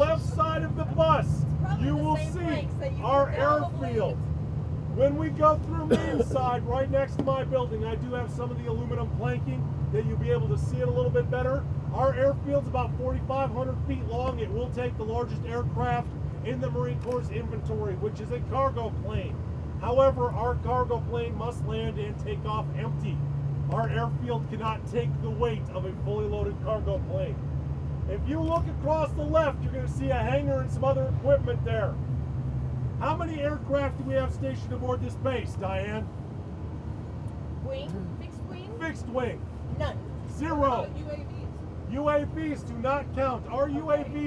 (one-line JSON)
{"title": "MCAGCC Twenty Nine Palms - Yelling tour MCAGCC Twentynine Palms", "date": "2012-04-18 09:09:00", "description": "Yelling tour on the bus, bouncing across the Mojave sand", "latitude": "34.30", "longitude": "-116.15", "altitude": "607", "timezone": "America/Los_Angeles"}